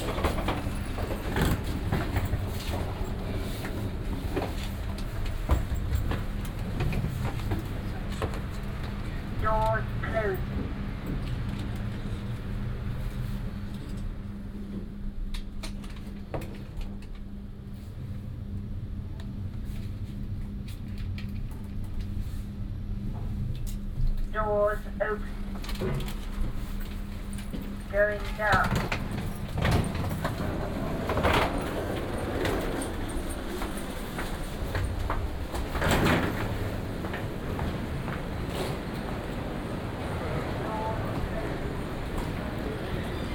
London Gatwick Airport (LGW), West Sussex, UK - shuttle ride to north terminal
London Gatewick airport, shuttle ride to the north terminal, elevator, airport ambience
(Sony PCM D50, OKM2 binaural)